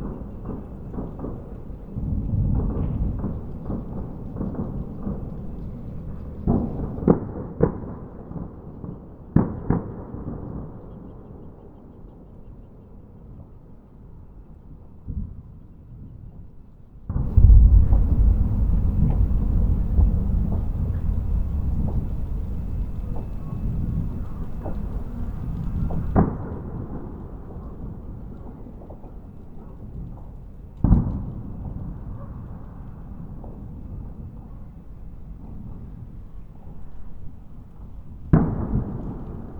New Years celebrations in Malvern Wells. Recorded in my back garden using a Sound Devices Mix Pre 6 11 at 32bitFP x 24K with 2x Sennheiser MKH 8020s. The sounds are coming from nearby and across the Severn Valley eastwards towards Bredon Hill.
West Midlands, England, United Kingdom, 1 January 2021